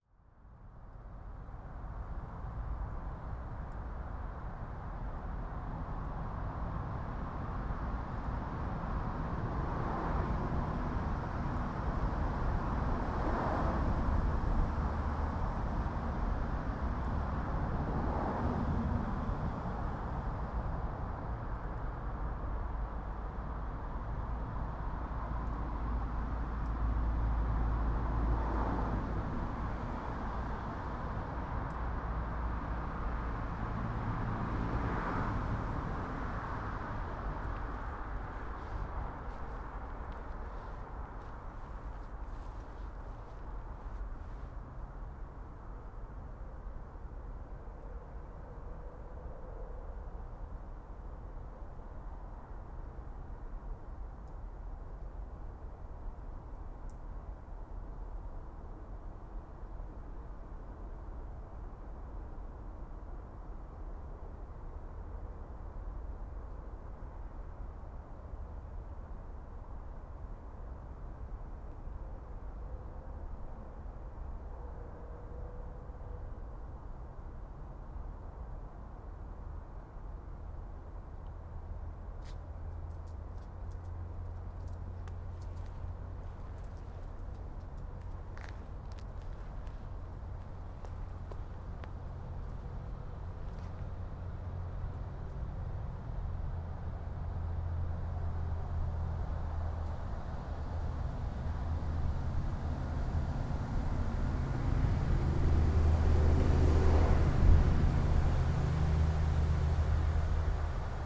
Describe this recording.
Stood facing West and Team Valley. Distance sound of traffic from valley and A1. Cars, buses and lorries driving past behind on Saltwell Road. Recorded on Sony PCM-M10.